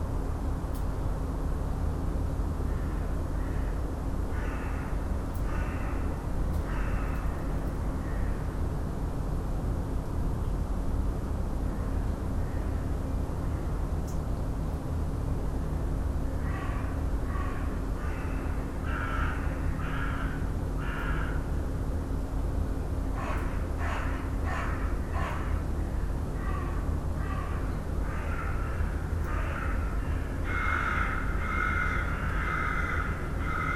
Seraing, Belgique - The coke plant
In the very old power plant of the Seraing coke plant, recorder left alone at the window, with crows discussing and far noises of the Shanks factory (located west). This power plant is collapsed and abandoned since a very long time. Crows like this kind of quiet place.